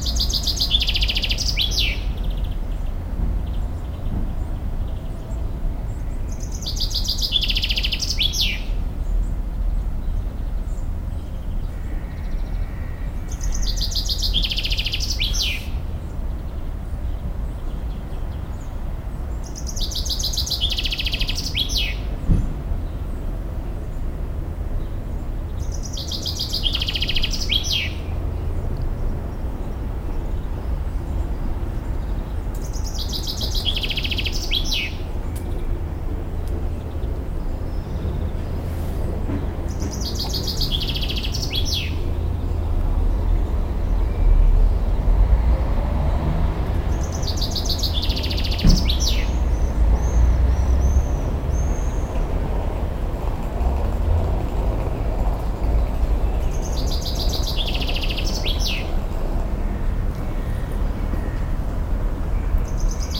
St. Gallen (CH), morning bird
recorded june 8, 2008. - project: "hasenbrot - a private sound diary"